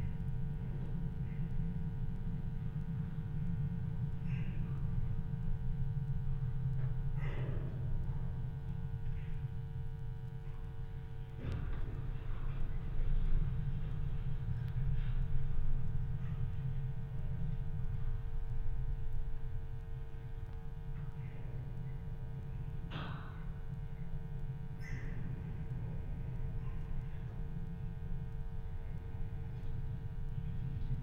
warehouse fence. contact microphone + electromagnetic antenna.